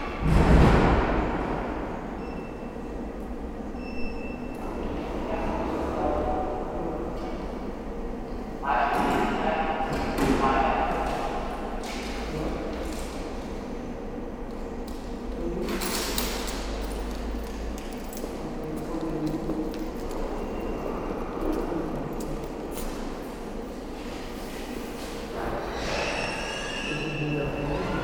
Aalst, België - Aalst station
An unpleasant atmosphere in the waiting room, with a lot of reverberation. Then on the platforms, two trains pass, one to Jette and the other towards Gent. At the end of the recording, the door closes again and ends this sound.
Aalst, Belgium